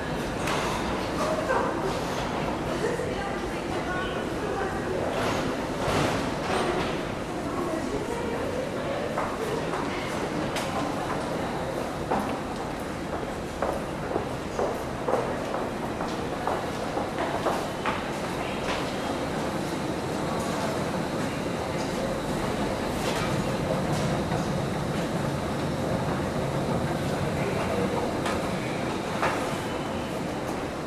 Paris, Metro Grands Boulevards, towards quotidianity
Three ears in the entrance of the subway station. Things you certainly don hear when you take the metro here everyday.